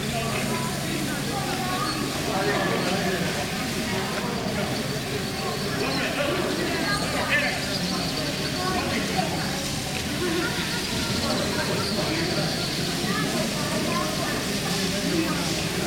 Dubrovnik, July 1992, washing the pavement after 9 months of siege - Stradun, 1992, water finally
main street of old Dubrovnik, voices of inhabitants, swallows, city-tower bells, voices of workers openning access to water, jet of water